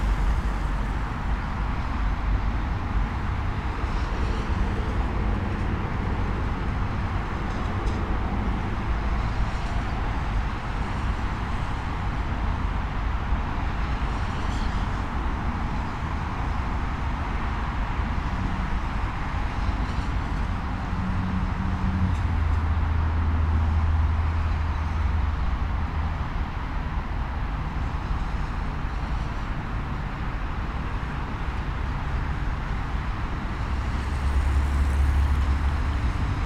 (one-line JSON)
{"title": "Parodų g. 2, Vilnius, Lithuania, traffic", "date": "2022-05-28 20:11:00", "latitude": "54.67", "longitude": "25.22", "altitude": "94", "timezone": "Europe/Vilnius"}